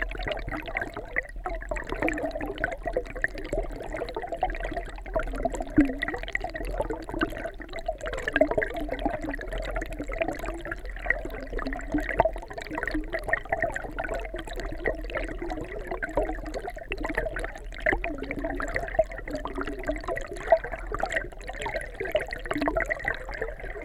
Vyžuonos, Lithuania, wooden remains
Handmade "stick" contact microphone on the wooden remains of abandoned watermill